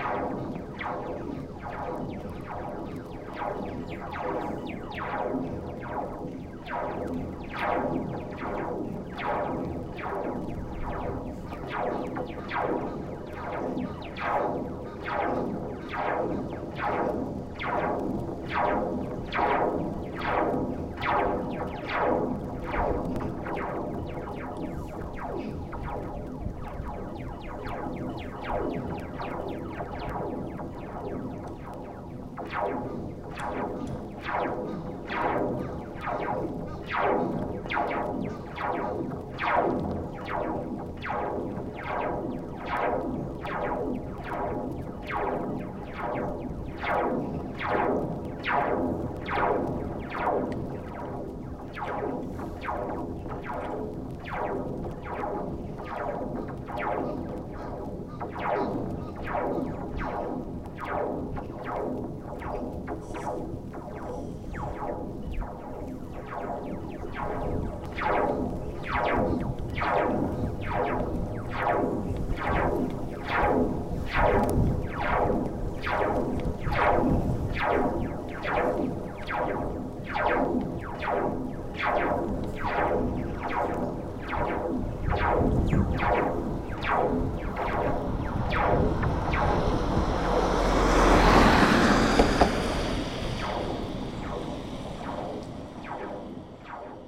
Visé, Belgium - Bridge cable
There's very much wind this morning. A cable of the bridge makes some strange sounds. I tried a recording but it was difficult with the wind pushing me, and I was alone. As it's an interesting place, I will come back with friends in aim to record this good bridge during a tempest. Friends will help me with a big plank, protecting microphones from the wind.
20 January 2018, 10:30